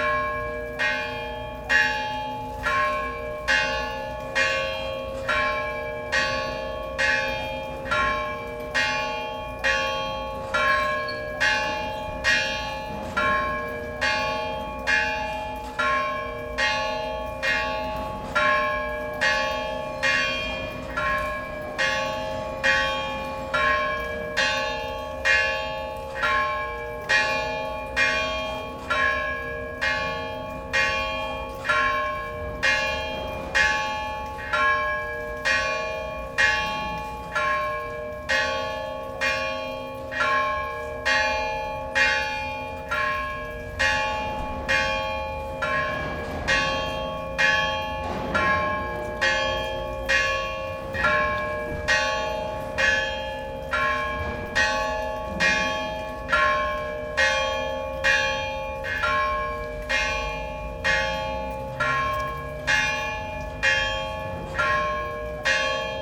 Gyumri, Arménie - Gyumri bells
During the beginning of the Liturgy of Preparation, bells are ringing. The Deacon rings it by chiming, using ropes. Here in Gyumri, it's an extremely bad chiming. We can understand it by the fact the beautiful old bells were destroyed during the 1988 earthquake.